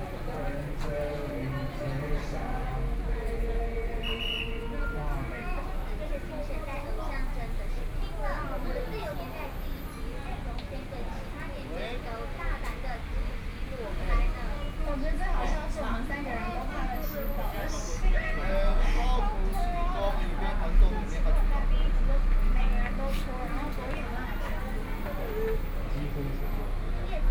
Rainy Day, Sitting in front of a convenience store, Out of people in the temple and from, Sound convenience store advertising content, Binaural recordings, Zoom H4n+ Soundman OKM II